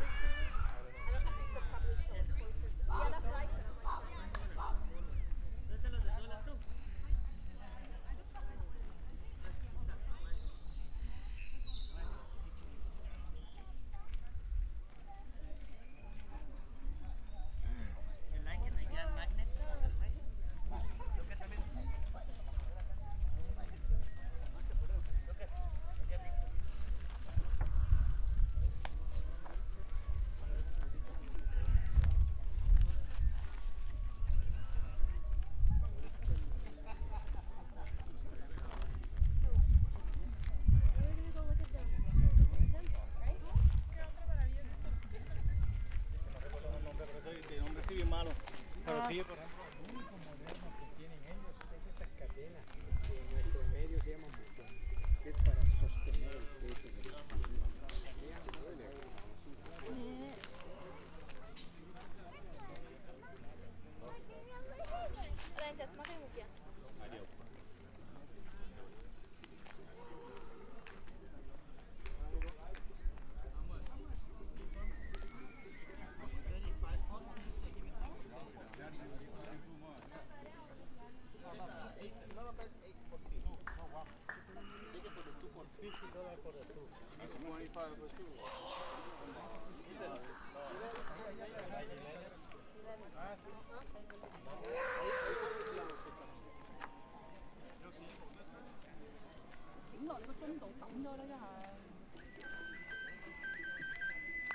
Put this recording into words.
This is a walk around the Kukulcan Pyramyd in Chichen Itza. Tascam DR-40 internal mics. Windy day.